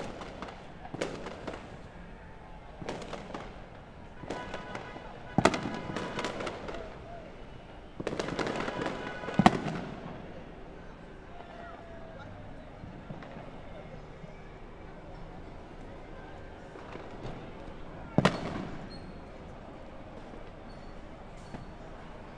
Wyckoff Ave, Brooklyn, NY, USA - Ridgewood/Bushwick 4th of July Celebration
Ridgewood/Bushwick 4th of July Celebration.
NYC, New York, USA